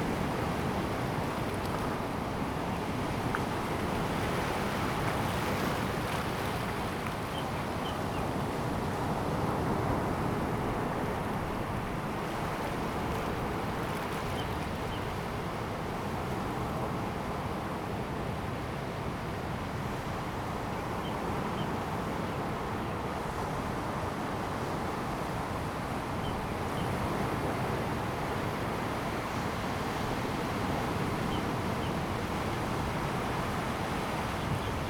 興海路, Xinghai Rd., Manzhou Township - wave
the waves dashed against the rocks, Sound of the waves, birds sound
Zoom H2n MS+XY
23 April 2018, ~09:00